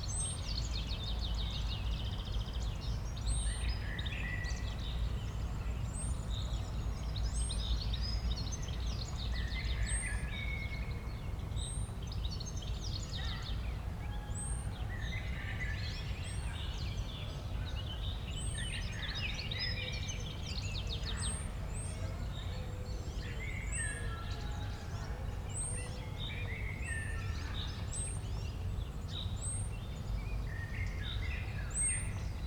Berlin, Friedhof Lilienthalstr. - Easter morning cemetery ambience
Easter morning cemetery atmosphere on Friedhof Lilienthalstr., Berlin. Crows, ravens, tits and finches and a lot of other birds, church bells, people, dogs, aircraft and some strange clicks and pops, probably because the microphones are just lying around with not much care taken, moved by a gentle wind
(Tascma DR-100 MKIII, Primo EM272)
Deutschland, 18 April